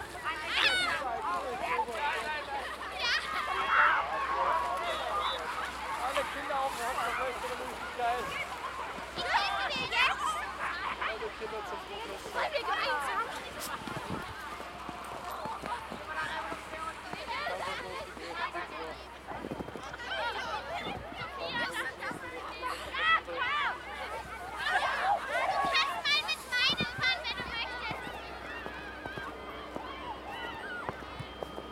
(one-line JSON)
{"title": "Krauthügel, Hans-Sedlmayr-Weg, Salzburg, Österreich - first snow", "date": "2021-12-09 13:04:00", "description": "first snow: children sledding and sliding down sacks", "latitude": "47.79", "longitude": "13.05", "altitude": "427", "timezone": "Europe/Vienna"}